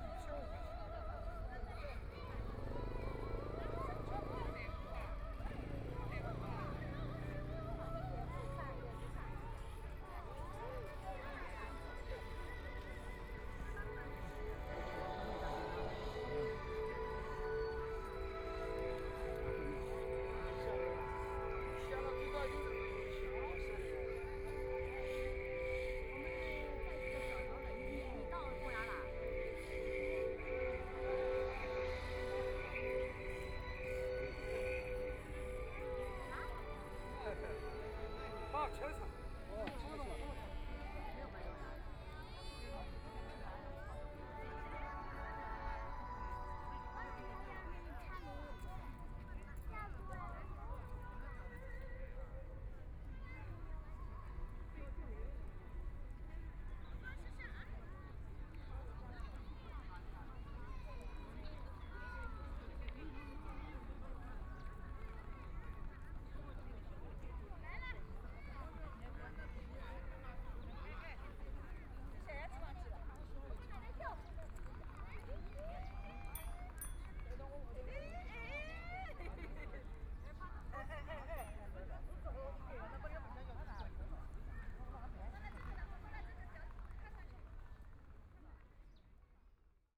Park on the grass, People are diabolo, Binaural recording, Zoom H6+ Soundman OKM II
Shanghai, China, 26 November